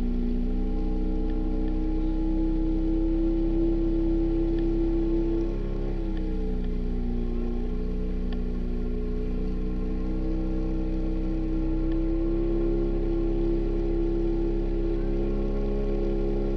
Taman Negara Rainforest, Malaysia - drone log 18/02/2013
long-boat on Sungai Pahang, between Kuala Tembeling and Taman Negara
(Zoom h2, contact mic on wooden floor)